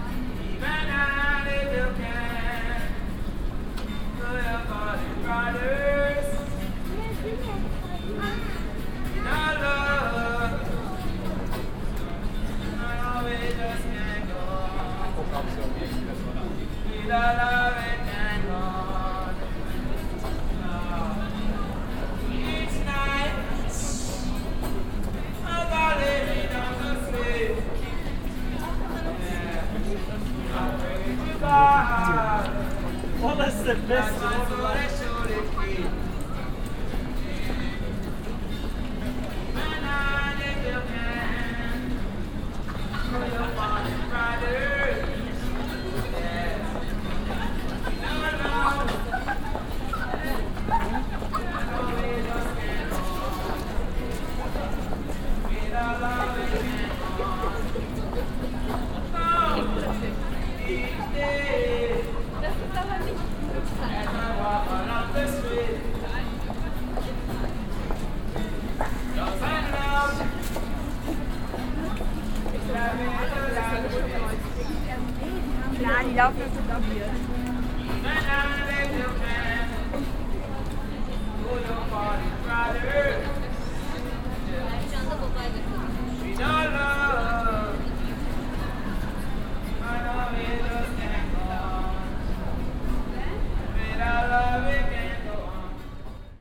cologne, schildergasse, street musician
each day as I am walking on the street, jamaican looking street musicain playing song mantra in the shopping zone
soundmap nrw: social ambiences/ listen to the people in & outdoor topographic field recordings
June 2009